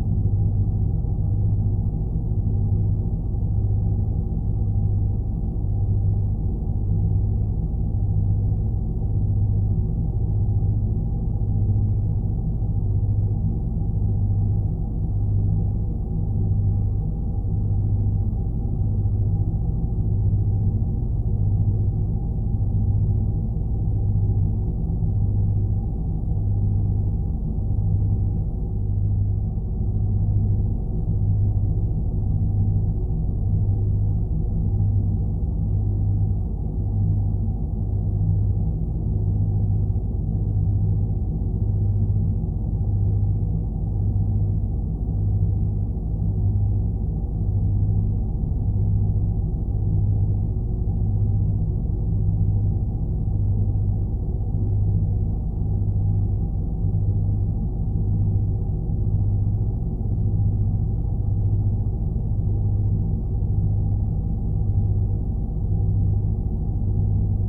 Drone sound recorded with Lome Geofone, placed outside on a large contanier (placed on the beach) with an activ pump inside. Øivind Weingaarde.
Region Midtjylland, Danmark, 27 September 2022